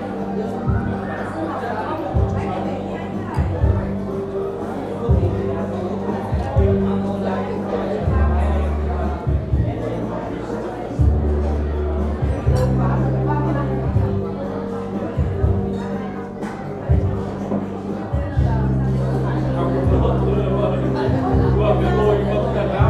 berlin, herzbergstraße: dong xuan center, halle 3, restaurant
vietnamese restaurant at dong xuan center, solo entertainer preparing his synthesizer for a vietnamese wedding party
the city, the country & me: march 6, 2011
Berlin, Deutschland, March 2011